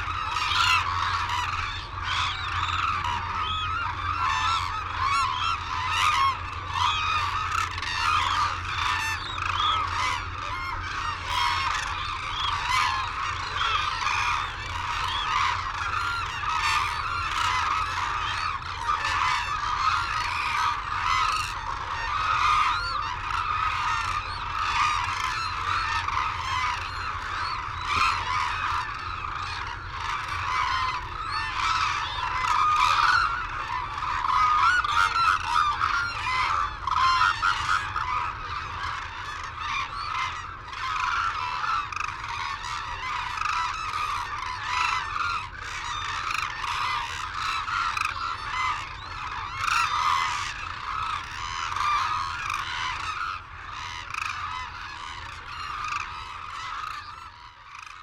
Sho, Izumi, Kagoshima Prefecture, Japan - Crane soundscape ...
Arasaki Crane Centre ... Izumi ... calls and flight calls from white naped cranes and hooded cranes ... cold windy sunny morning ... Telinga Pro DAT 5 to Sony Minidisk ... background noise ... wheezing whistles from young birds ...